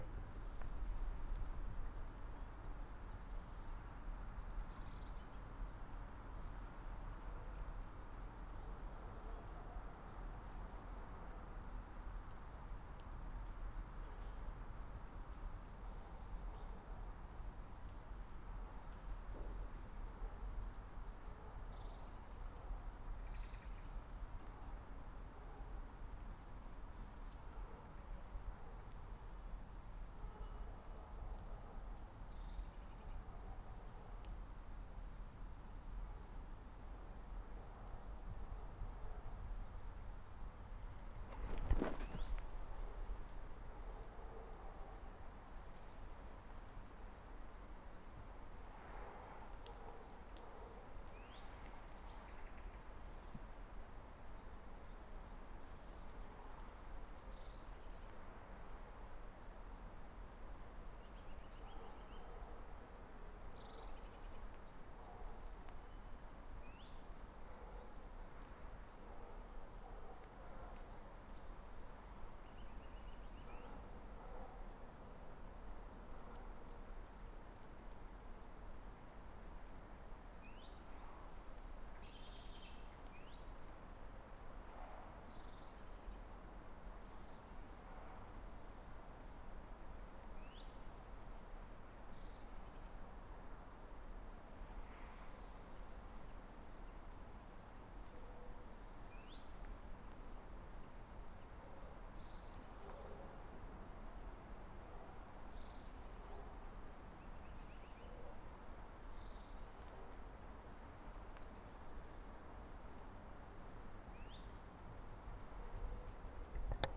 Terril du Martinet, Charleroi, België - Terril du Martinet
Birdsong and dog barking on the Terril du Martinet in Monceau-sur-Sambre, Charleroi
Charleroi, Belgium, 26 January